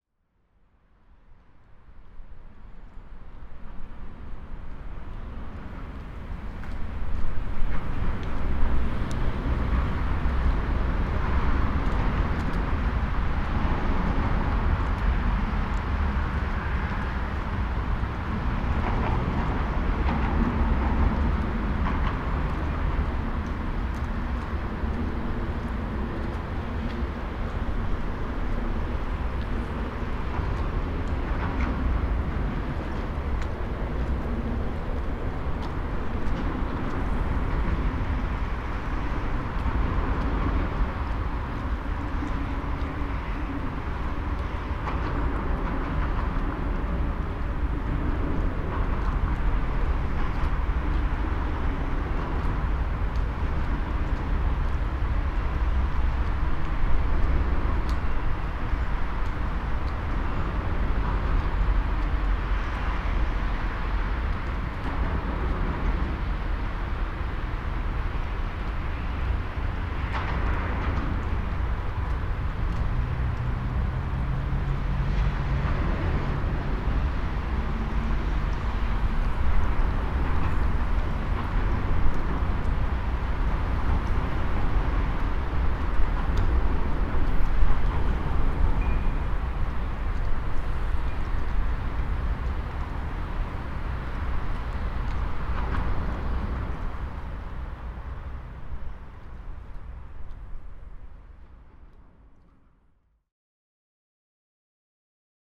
Binckhorst, Laak, The Netherlands - Saturday harbour ambience
A "quiet" saturday in the harbour.
Binaural recording (dpa4060 into fostex FR2-LE).
Binckhorst Mapping Project.